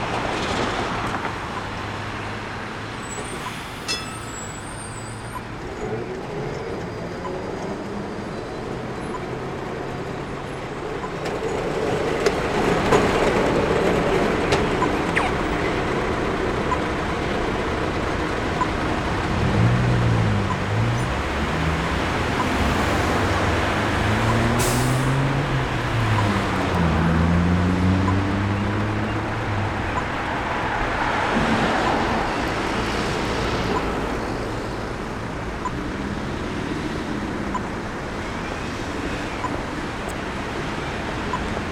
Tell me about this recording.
peculiar places exhibition, landscape architecture, urban, urban initiatives